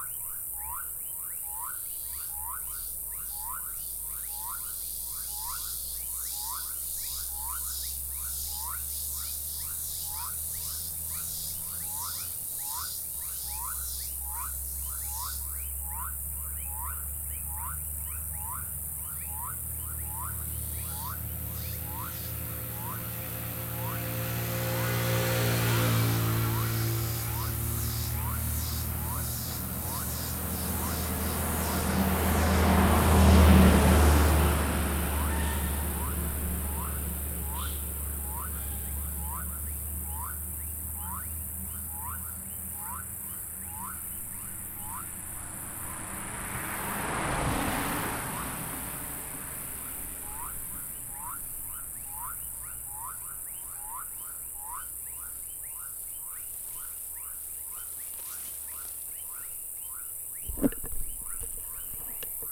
Varios Grillos y sapos al lado de una carretera.
Casanare, Colombia, June 2013